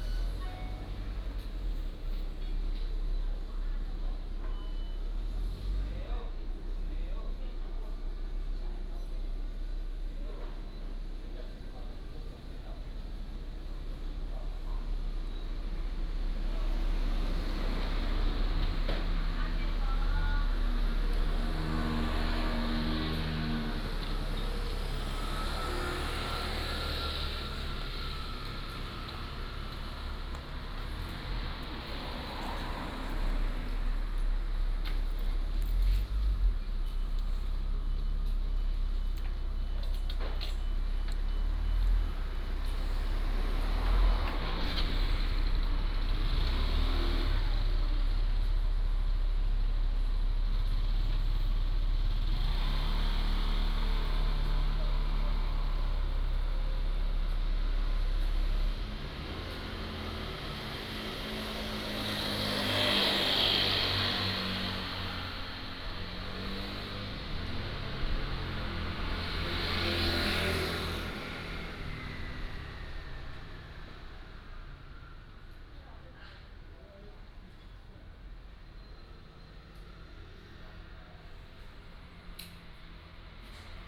small Town, Traffic Sound, Next to the Agricultural land